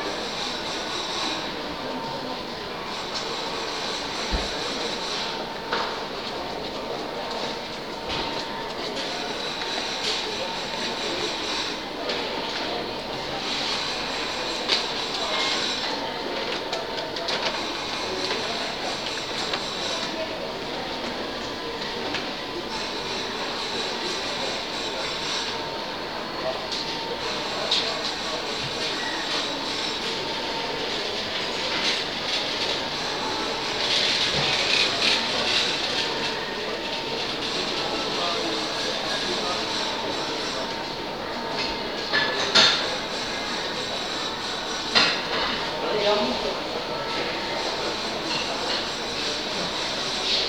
Tool Store, Szczecin, Poland
Inside the tool store.